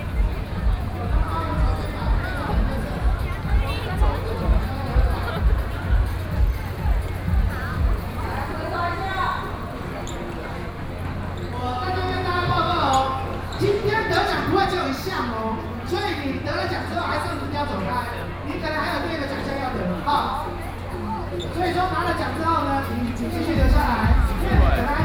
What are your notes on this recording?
Aggregation street theater, Hip-hop competitions, The crowd, Binaural recordings, Sony PCM D50 + Soundman OKM II